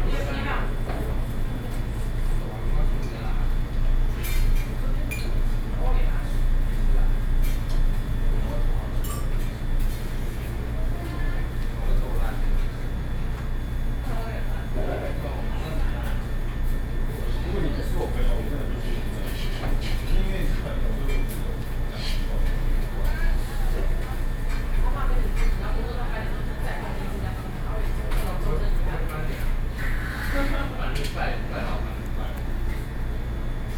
Ln., Linsen S. Rd., Zhongzheng Dist., Taipei City - In a restaurant
In a restaurant, Air-conditioning noise, Sony PCM D50 + Soundman OKM II